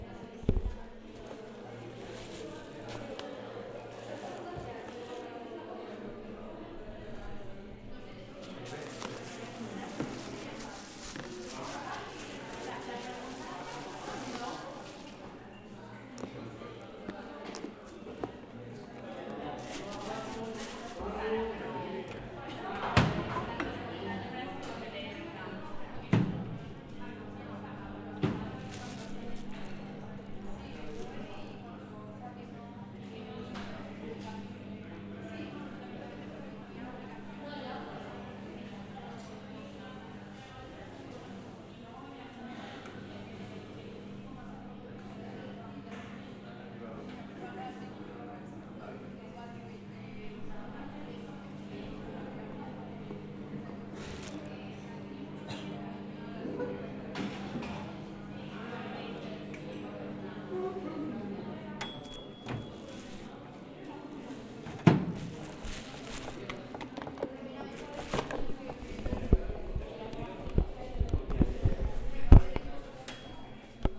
Calle Marx, Madrid, España - School cafeteria
It was recorded in the school cafeteria located between the Philosophy Faculty and the Education Faculty. It is a single room with a high celling located in the basement, so every sound rumbles with echo. We can hear groups of people talking, people putting their food in the microwaves, the actual microwaves working and their ringing when the countdown set is over.
Recorded with a Zoom H4n.